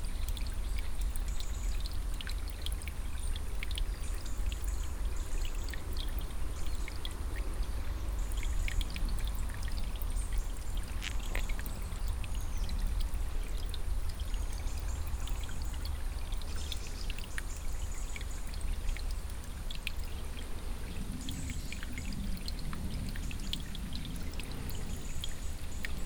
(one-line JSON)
{"title": "waldberg, forest, small stream", "date": "2011-09-17 18:49:00", "description": "Inside the valley of a broadleaf forest. The sound of a small stream flowing slowly across stones. Around many birds tweeting vividly some wind movements in he trees and a screech owl howling nearby.", "latitude": "50.04", "longitude": "6.11", "altitude": "351", "timezone": "Europe/Luxembourg"}